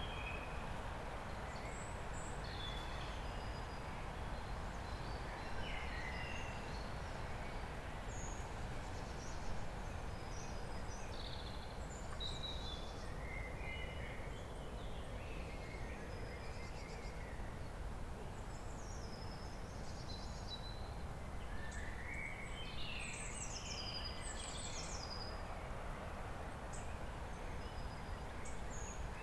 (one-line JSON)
{"title": "Suchsdorf forest, Kiel, Deutschland - Forest ambience with anthropophony", "date": "2020-04-12 18:15:00", "description": "Forest ambience with anthropophony, a horse and some people passing by, birds, wind in the trees, dog barking, distant traffic noise and bassy agricultural machinery. Zoom H6 recorder in-built xy microphone with furry wind protection.", "latitude": "54.35", "longitude": "10.07", "altitude": "17", "timezone": "Europe/Berlin"}